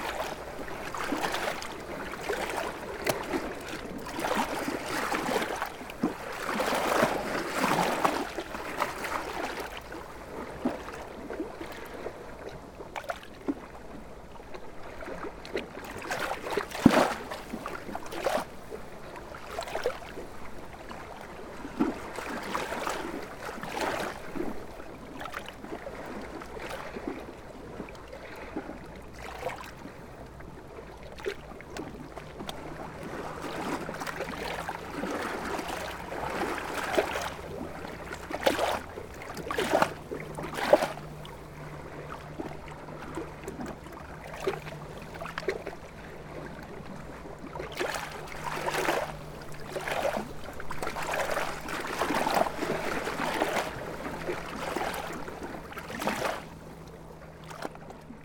{"title": "Kaunas, Lithuania, Kaunas lagoon", "date": "2021-08-19 13:50:00", "description": "Windy day, I found some calm place for my mics amongst stones...", "latitude": "54.88", "longitude": "24.01", "altitude": "44", "timezone": "Europe/Vilnius"}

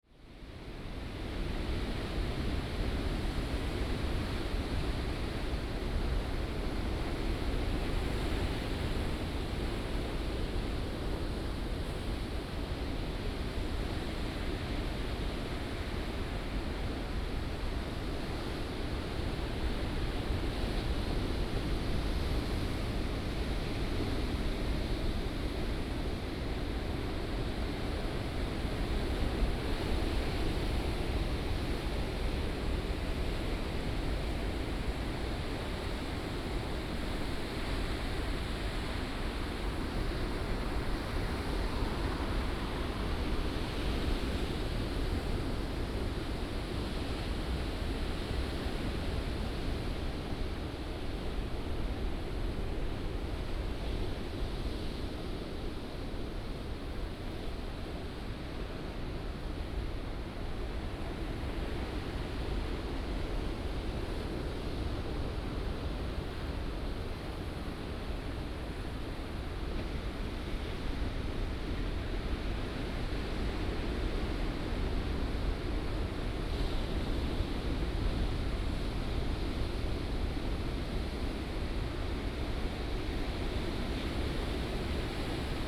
{"title": "Haiqian Rd., Manzhou Township - On the coast", "date": "2018-04-02 13:49:00", "description": "On the coast, Sound of the waves", "latitude": "22.15", "longitude": "120.89", "altitude": "19", "timezone": "Asia/Taipei"}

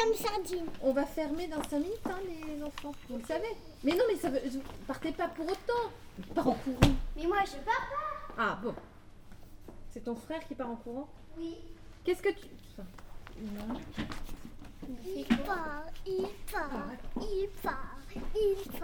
{"title": "Lucé, France - The child who didn't want to speak to the adults", "date": "2017-08-05 17:00:00", "description": "We are here into the entrance of the Lucé library. Children use this place as a playful spot. During the long summer holidays, there's nothing to do on the surroundings, especially in Chartres city, despising deeply the poor people living here. It's very different in Lucé as the city is profoundly heedful of this community. In fact, it means the library forms a small paradise for aimless children. These children are accustomed and come every day.\nOn this saturday evening, the library will close in a few time. Chidren play, joke, and discuss with the employees. Four children siblings are especially active and noisy. Rim (it's her first name) is a small child, I give her four years, nothing more. She doesn't want to talk to the adults. When she wants something, she asks her sisters to speak to the adult. As an education, adults refuse to answer her and kindly force her to speak to them. It's difficult for her and she's crying every time.\nIt's a completely improvised recording.", "latitude": "48.44", "longitude": "1.47", "altitude": "157", "timezone": "Europe/Paris"}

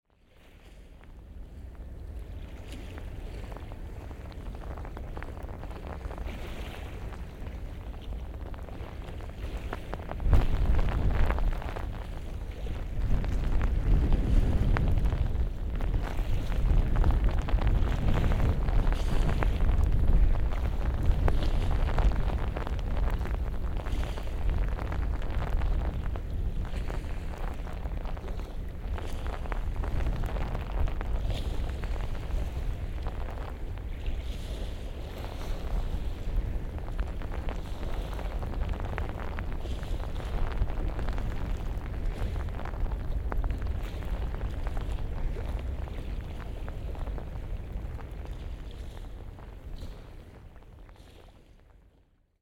{"title": "Dunkerque Port Ouest rain - DK Port Ouest rain", "date": "2009-04-16 01:04:00", "description": "Standing in rainy and windy weather with Zoom H2 and OKM mics under the hood of my anorak. Binaural - use headphones!", "latitude": "51.02", "longitude": "2.17", "altitude": "2", "timezone": "Europe/Berlin"}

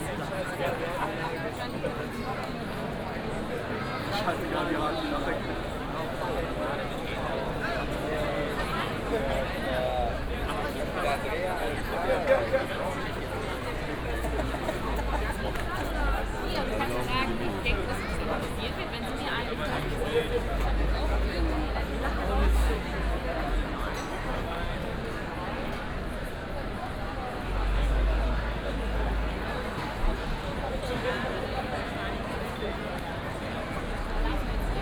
{"title": "brüsseler platz - summer evening ambience", "date": "2012-07-18 21:55:00", "description": "lots of people celebrating a warm summer evening at Brüsseler Platz. this place has become a public meeting point during the last years.\n(Sony PCM D50 + OKM, binaural walk)", "latitude": "50.94", "longitude": "6.93", "altitude": "60", "timezone": "Europe/Berlin"}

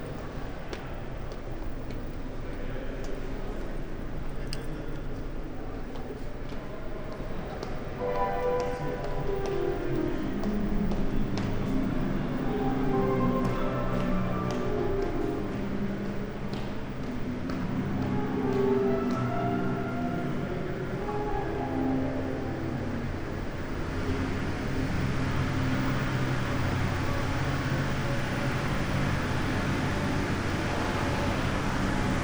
{"title": "Katowice Dworzec - station hall ambience at night", "date": "2018-10-20 00:05:00", "description": "Kattowitz, Katowice Dworzec, man station, hall ambience at night, people exercising on a public piano\n(Sony PCM D50, Primo EM172)", "latitude": "50.26", "longitude": "19.02", "altitude": "269", "timezone": "GMT+1"}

